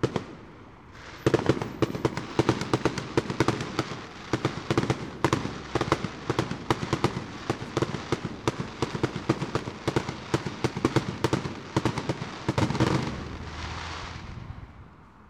London Borough of Haringey, Greater London, UK - Fireworks, North Hill, London N6
Recorded using Roland CS10EM Binaural Mics into a Zoom H4n